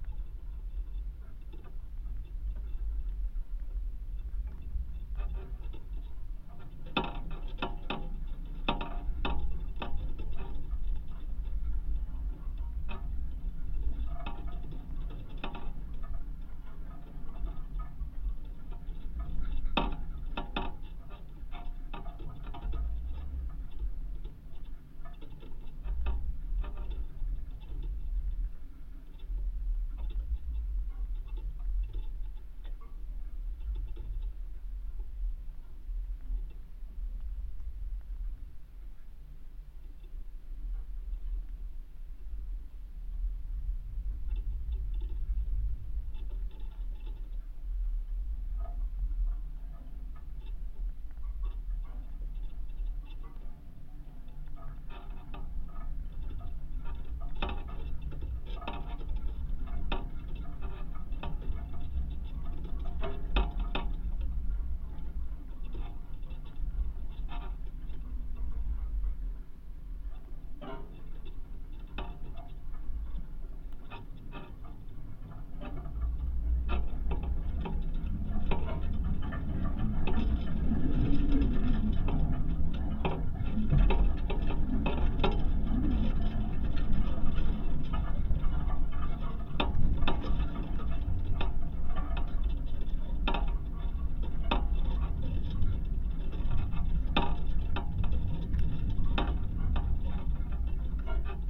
Sirutėnai, Lithuania, rusty barbed wire
A fragment od barbed wire, probably even from soviet times. Contact microphones recording.